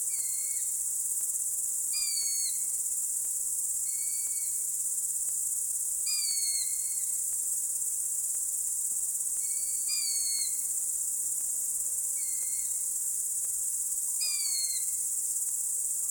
Seliste crickets and young owls
baby owls squeaking in the night